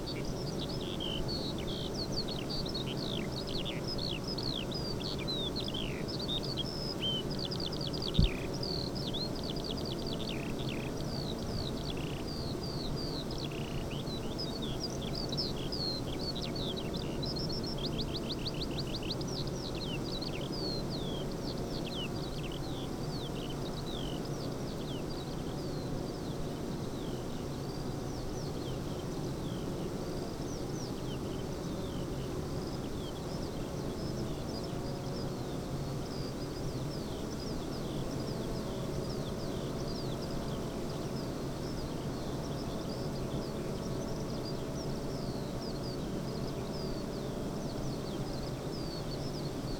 bee hives ... eight bee hives in pairs ... the bees to pollinate bean field ..? produce 40lbs of honey per acre ..? xlr SASS to Zoom H5 ... bird song ... calls ... corn bunting ... skylark ...
Yorkshire and the Humber, England, United Kingdom, 25 June, ~06:00